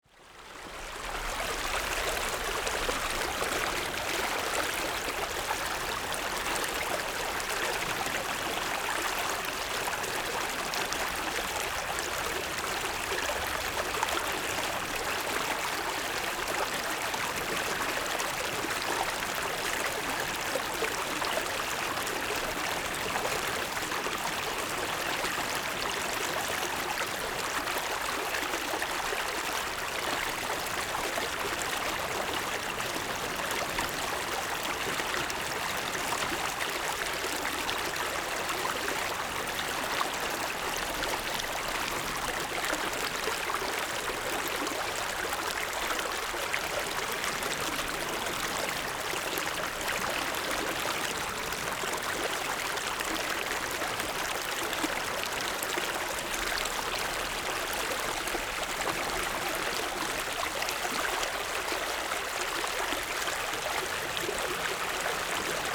Liukuaicuo, 淡水區, New Taipei City - Stream sound
Aircraft flying through, Sound of the waves
Zoom H6 XY